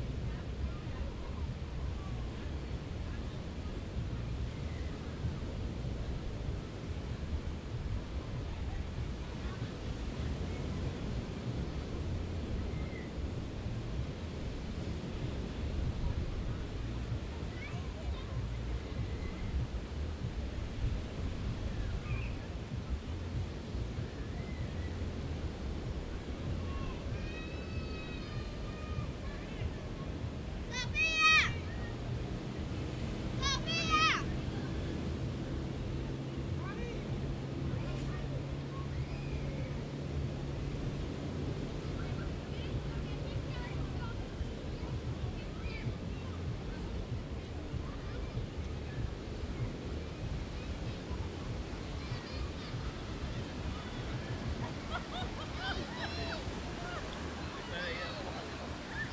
Necoclí, Antioquia, Colombia - Deriva sonora en las playas de Necoclí
A soundwalk around one of Necocli's beaches.
The record was taken during the month of December 2014 on a trip around the Urabá region, Colombia.
11 December 2014